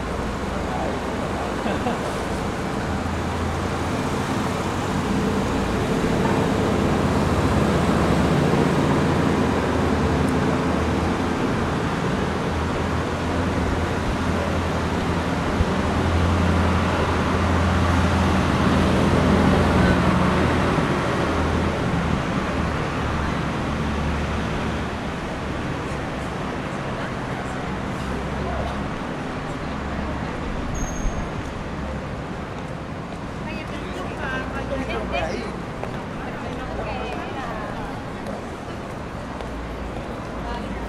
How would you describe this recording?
Barcelona, Avinguda del Portal de l´ Angel, vor dem El Corte Ingles mit der quietschenden Tür